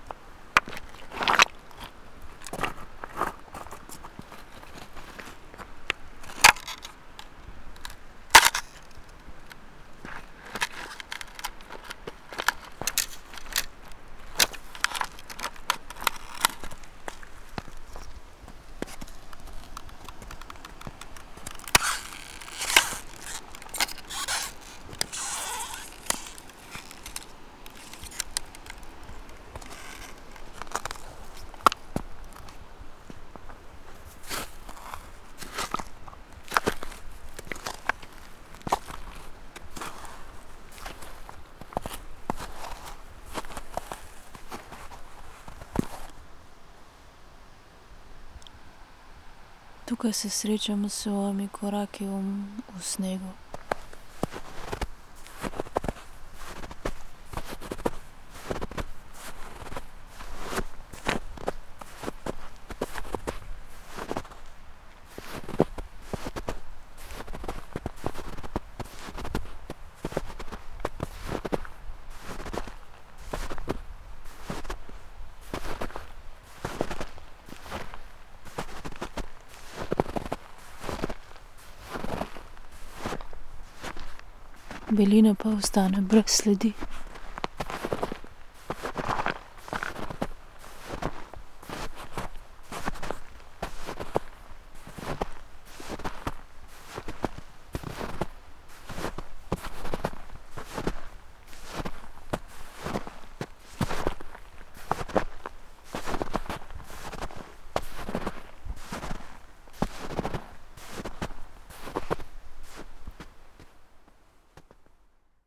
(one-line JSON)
{"title": "river Drava, Loka - snow poem", "date": "2015-01-01 14:10:00", "description": "winter, spoken words, snow, frozen stones, steps", "latitude": "46.48", "longitude": "15.75", "altitude": "233", "timezone": "Europe/Ljubljana"}